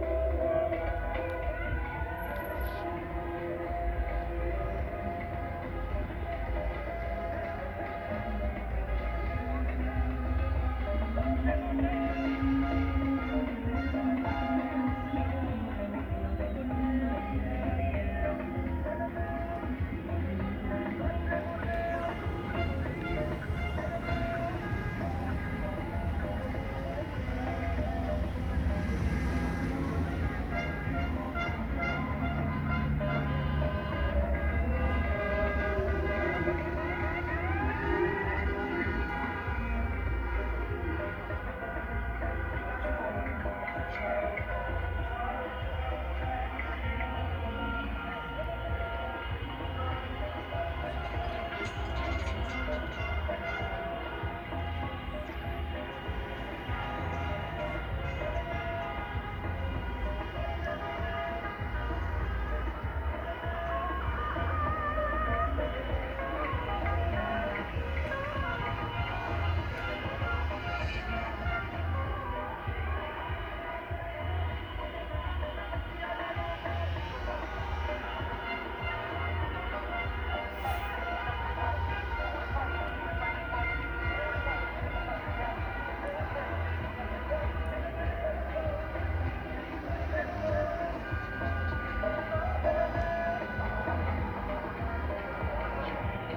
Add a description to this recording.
ON FAIT LE MAXIMUM DE BRUIT POUR SOUTENIR UN CANDIDAT